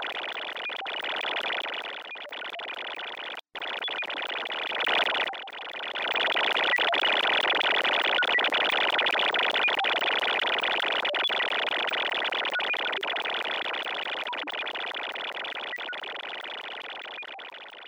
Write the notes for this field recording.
Electromagnetic field song of a Automated teller machine, recorded with a telephone pickup coil.